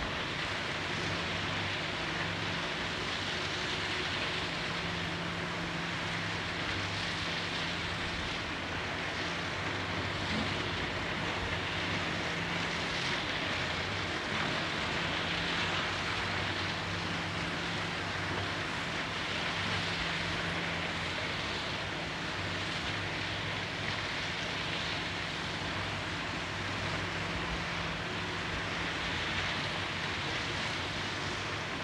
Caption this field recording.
São Martinho de Sardoura, Portugal Mapa Sonoro do Rio Douro Douro River Sound Map